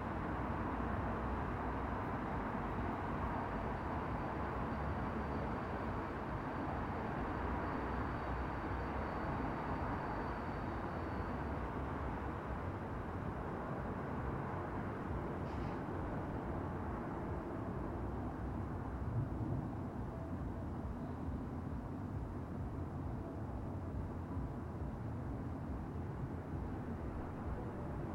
Manitiusstraße, Dresden, Germany - Rooftop on a Windy Saturday Night
Recorded with a Zoom H5.
Light traffic noise, a little bit of party music and an airplane flies over at the end.
Around 3 minutes the traffic noise suddenly becomes very quiet.